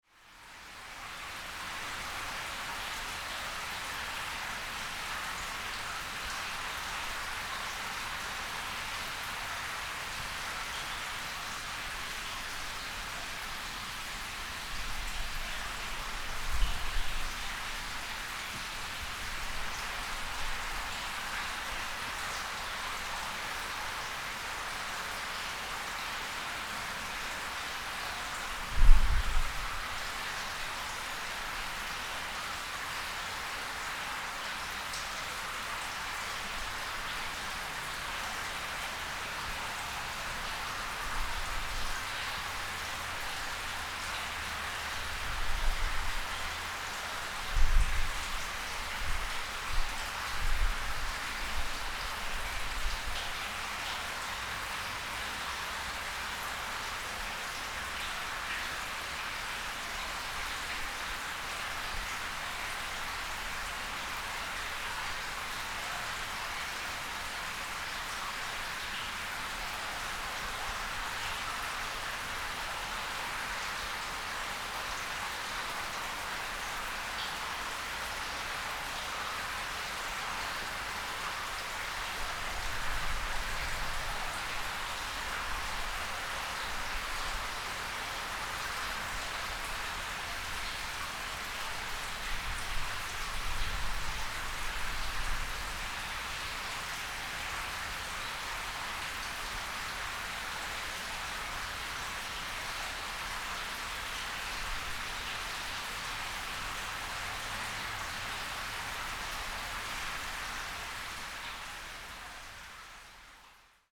The sound of running water sewer, Zoom H6 M/S, +Rode Nt4